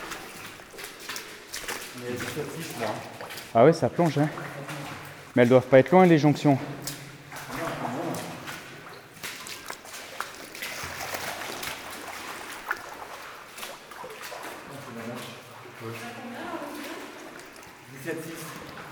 Moyeuvre-Grande, France - Asphyxiant gas in the mine
Exploring a district in the underground mine where asphyxiant gas level is high. We have some Drager gas detectors. At 2:50 mn, the first detector is shouting, telling us it's dangerous. There's not enough oxygen (16% oxygen, this is 50% the oxygen you need in a normal level, and very too much carbon dioxide). We are going more far than dangerous, that's why it's shouting hardly during all the recording. In fact, we try to reach some stairs, written on the map, in aim to climb to an upper level. It's not very distant from the tunnel where we are. It would means a better air, because carbon dioxide is heavier than air. Unfortunately, the stairs are too far for us, going there would means to reach a district where oxygen level is 14%. This kind of level causes death within 5 to 10 minuts, no more. It means we encountered a defeat and we can't explore an entiere district where there's an enormous stone crusher (written on the map as a gigantic machine).
Ranguevaux, France, 14 January 2017